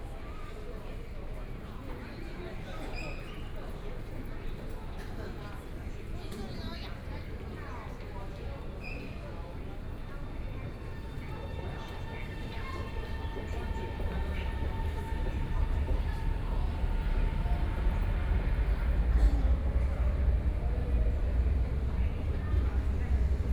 Huashan Road, Shanghai - Line 11 (Shanghai Metro)
from Jiaotong University Station to Xujiahui station, Walking through the subway station, Binaural recording, Zoom H6+ Soundman OKM II